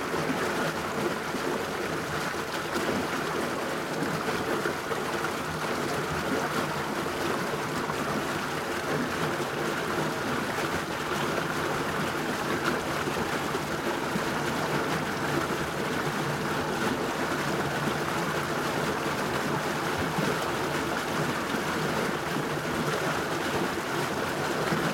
磺港溪, Taipei City, Taiwan - Hot spring water sound
9 November 2012, ~06:00